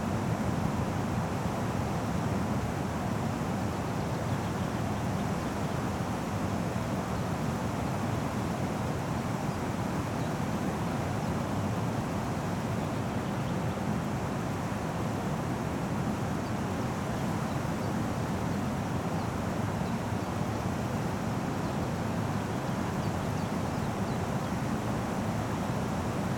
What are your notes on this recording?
ventilation shaft from an oil shale mine 70+ meters below